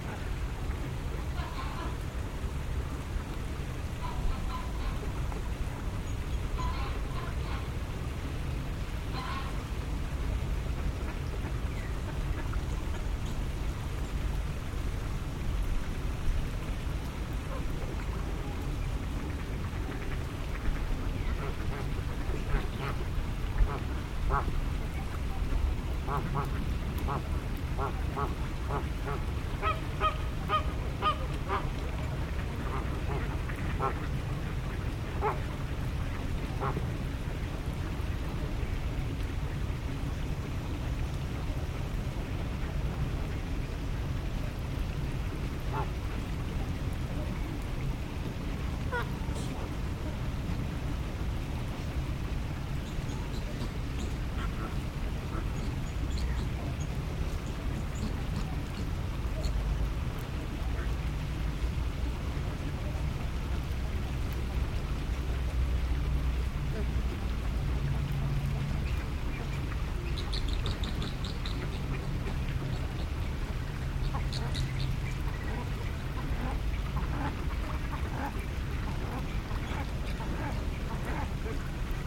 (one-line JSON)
{"title": "Zoo, garden, flamingos", "date": "2008-12-14 15:31:00", "description": "Even in the winter staying flamingos outside by the pool and singig together with ducks.", "latitude": "50.12", "longitude": "14.41", "altitude": "185", "timezone": "Europe/Prague"}